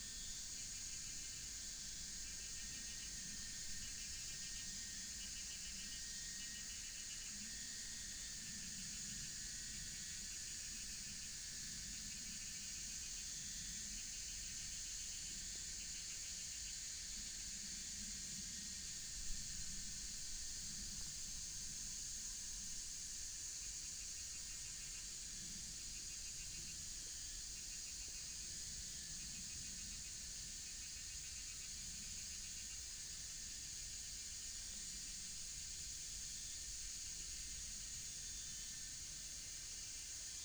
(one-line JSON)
{"title": "鳳儀橋, 大溪區承恩路 - little village", "date": "2017-08-09 18:33:00", "description": "little village, Bird call, Cicada cry, Traffic sound", "latitude": "24.83", "longitude": "121.30", "altitude": "259", "timezone": "Asia/Taipei"}